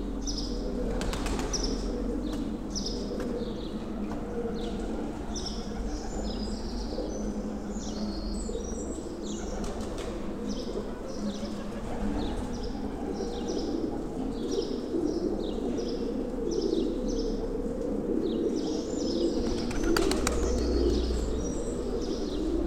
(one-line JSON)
{"title": "Cave Agia Sofia, Crete, chapel in the cave", "date": "2019-05-04 10:45:00", "description": "there's a chapel in the cave...ambience with pigeons", "latitude": "35.41", "longitude": "23.68", "altitude": "337", "timezone": "Europe/Athens"}